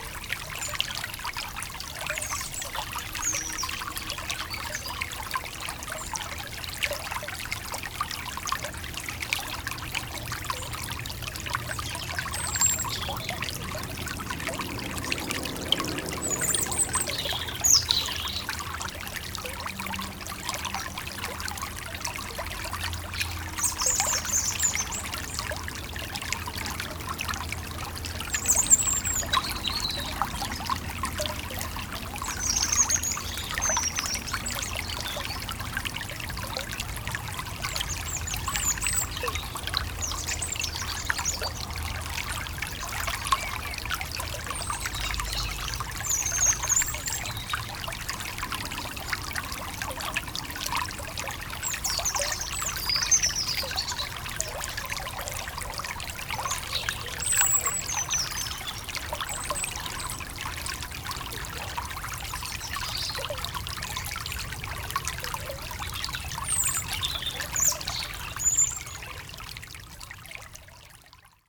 Court-St.-Étienne, Belgique - A river
A small river, called "Le Ry d'Hez".